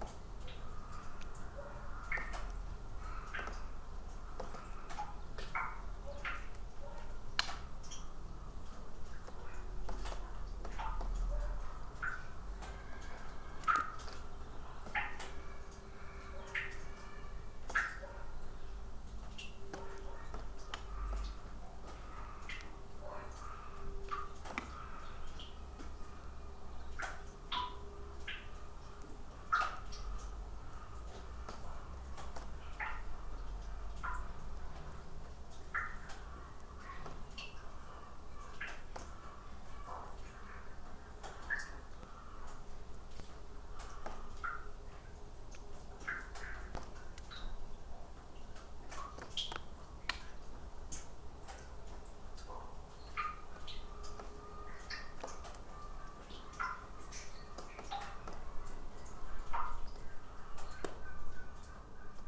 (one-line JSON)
{"title": "Pačkėnai, Lithuania, abandoned building", "date": "2021-03-25 11:45:00", "description": "Now abandoned former cultural centre", "latitude": "55.45", "longitude": "25.61", "altitude": "138", "timezone": "Europe/Vilnius"}